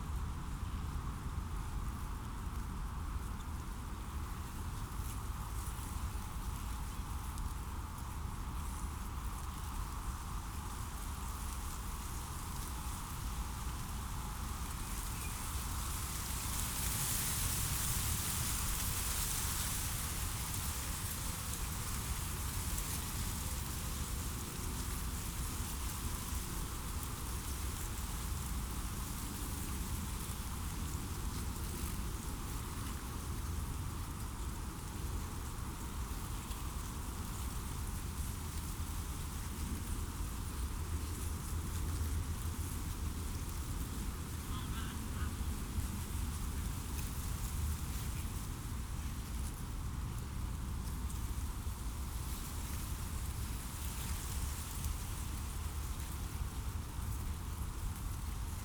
Moorlinse, Buch, Berlin, Deutschland - wind in reed, distant birds
The Moorlinse Buch is a natural water body in the Berlin district Buch in the district Pankow in the lowland of the Panke. It was formed in the 1990s by filling a depression with groundwater and stratified water.
According to old property maps from the 18th and 19th centuries, the area was originally a wet meadow. This was dried up by the establishment of the Berlin sewage fields at the end of the 19th century and because of the intensive agriculture in the surroundings since the 1950s.
As a breeding ground for almost all local waterfowl species, it became a popular observation site for ornithologists. Various amphibians have also settled here. As a habitat for endangered animal species, the Moorlinse has a similar significance as the nearby landscape conservation area of the Karow ponds, Bogensee and Karpfenteiche in Buch.
(Sony PCM D50, DPA4060)
Berlin, Germany, 30 March, 15:05